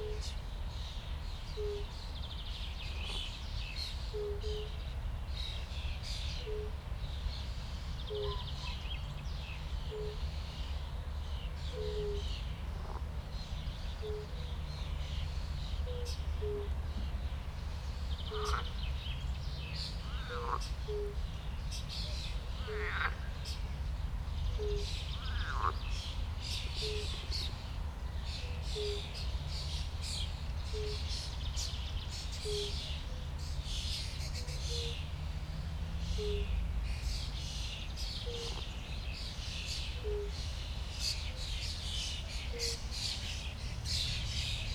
it's remarkable colder today, and also the frequency of the toad's call is lower and they call less frequent. But frogs seem to be more active
(Sony PCM D50, DPA4060)